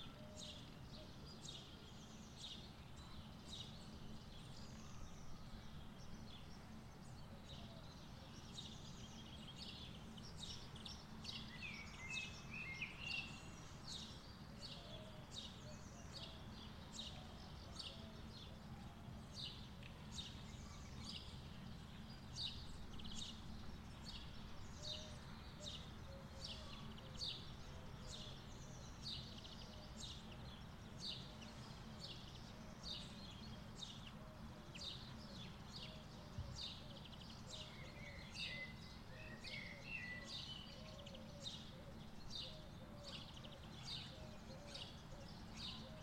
Platanias, Crete, at the graveyard
soundscape of Platanias from the top hill